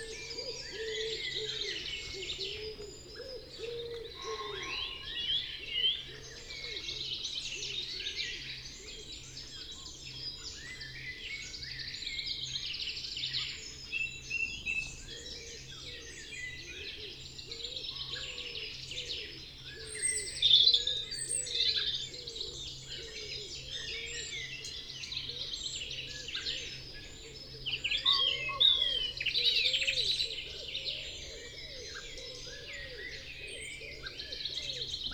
Green Ln, Malton, UK - the wood wakes up ... two ...
the wood wakes up ... two ... pre-amped mics in SASS ... bird call ... song ... from ... pheasant ... wren ... blackbird ... song thrush ... robin ... great tit ... blue tit ... wood pigeon ... tree creeper ... chaffinch ... great spotted woodpecker ... chiffchaff ... buzzard ... background noise and traffic ...
14 April 2019, ~05:00